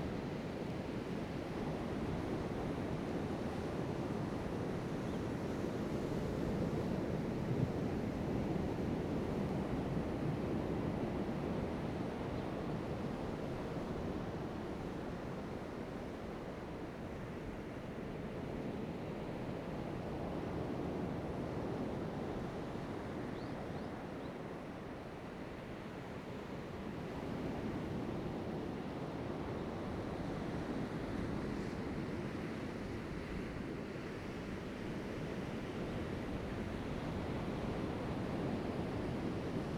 Chaikou Diving Area, Lüdao Township - Diving Area
Diving Area, sound of the waves
Zoom H2n MS +XY